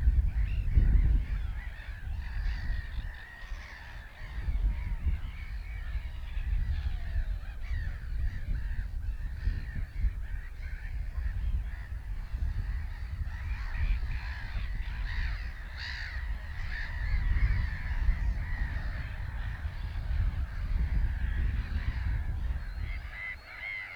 Terschelling, West aan Zee, meeuwenkolonie
5 July 2021, 22:09